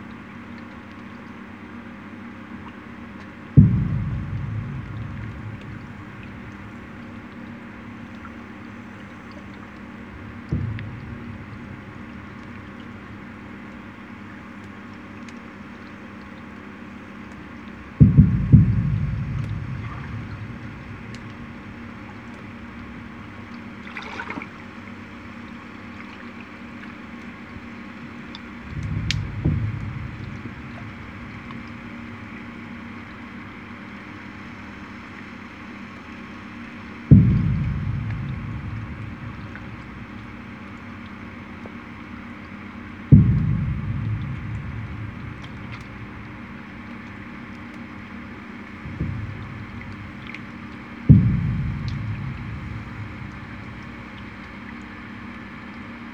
still boat on the peer of barreiro deep at night
10 September 2011, 15:01